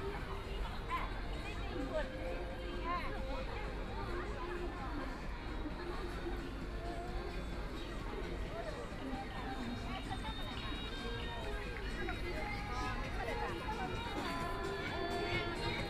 Lu Xun Park, Hongkou District - Holiday in the Park
Walking through the park, There are various types of activities in the square residents, Binaural recording, Zoom H6+ Soundman OKM II